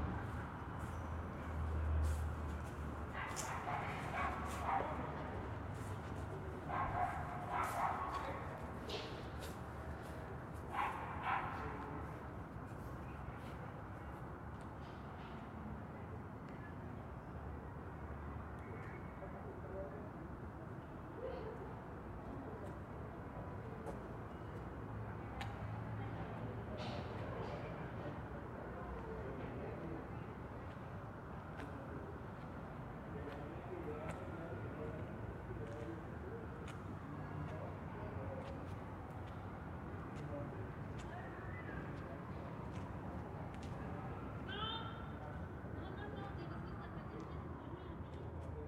Cra., Bogotá, Colombia - Little Crowded Atmosphere - Bogota Street
You will hear: dogs, people walking, people talking, various types of vehicles, car, motorcycles, light wind, dog, ambulance siren, reverb of park.
Región Andina, Colombia, 12 May 2021, 19:15